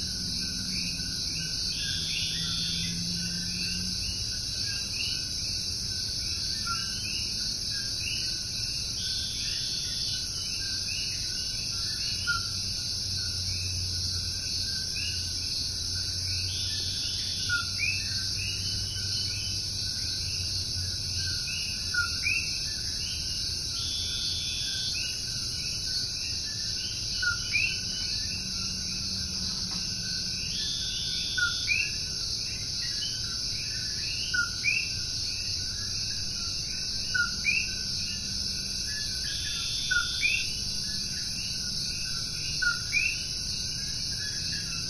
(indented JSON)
{
  "title": "Puerto Diablo, Vieques, Puerto Rico - Vieques Coqui Chirps",
  "date": "2013-03-10 19:32:00",
  "description": "Coqui chirps and other ambient noise behind our place on Vieques",
  "latitude": "18.16",
  "longitude": "-65.43",
  "timezone": "America/Puerto_Rico"
}